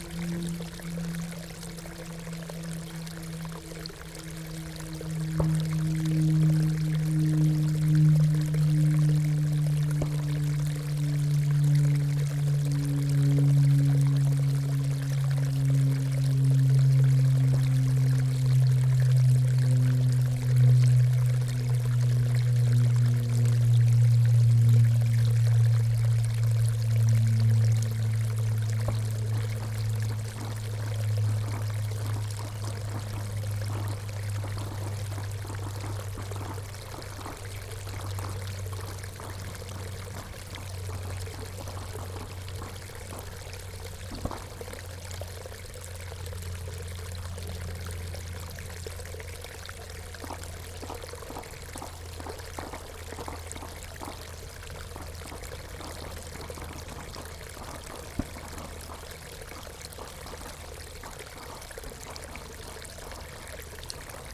small stream of water flowing into pond after rain, an aircraft crossing, creating a strong doppler sound effect
(Sony PCM D50, DPA4060)
Siemianowice Śląskie, Poland, 24 May 2019